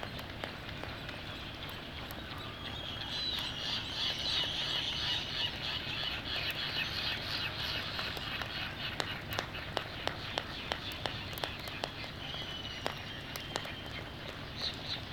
Charlie Barracks ... Sand Island ... Midway Atoll ... dark and drizzling ... mic is 3m from male on nest ... the birds whinny ... sky moo ... groan ... clapper their bills ... sounds from white terns and black-footed albatross ... bonin petrels ... Sony ECM 959 one point stereo mic to Sony minidisk ...
United States, 19 December 1997